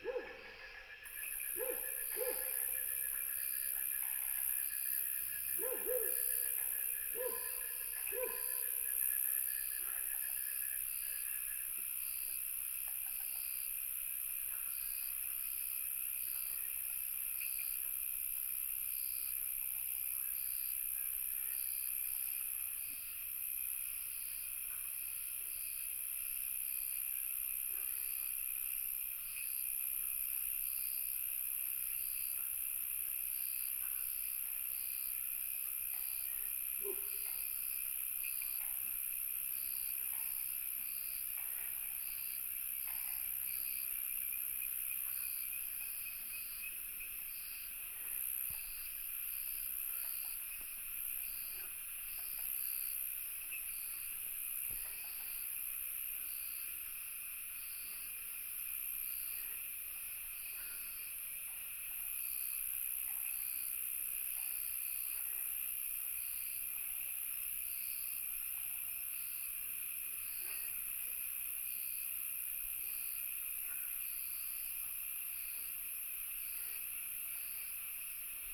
Dogs barking, Frogs chirping, Bird sounds
Nantou County, Puli Township, 華龍巷164號, 19 April 2016, ~19:00